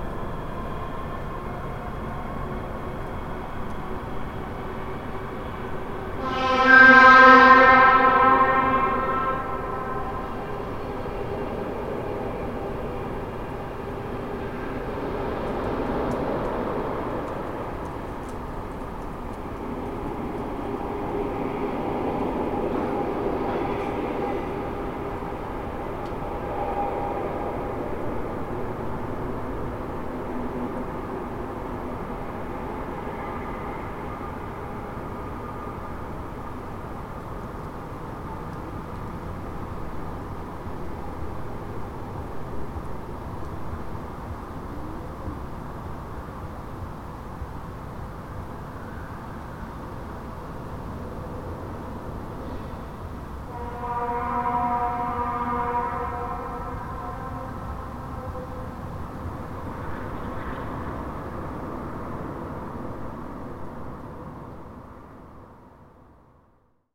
Dekerta, Kraków, Poland - (773 UNI) Transportation étude
Surprisingly well-played étude that happened around 1 am.
Recorded with UNI mics of Tascam DR100 MK3.
województwo małopolskie, Polska, 21 May